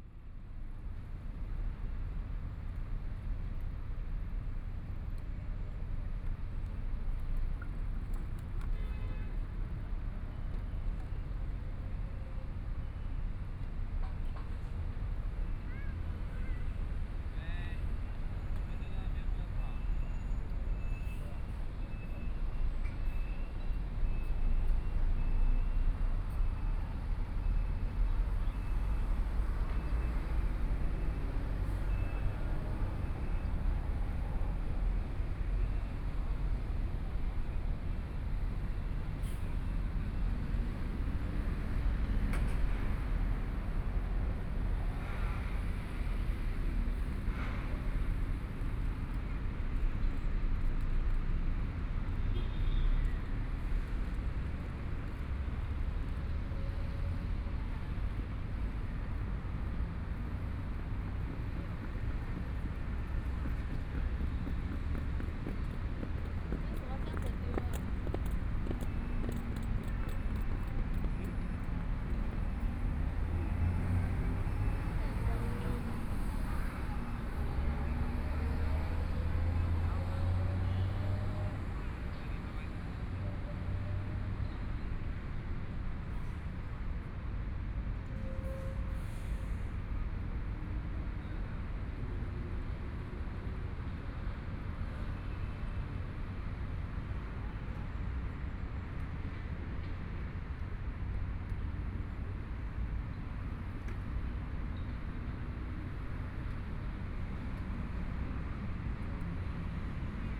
中山區成功里, Taipei City - In the square outside the station
In the square outside the station, Traffic Sound
Binaural recordings
Zoom H4n+ Soundman OKM II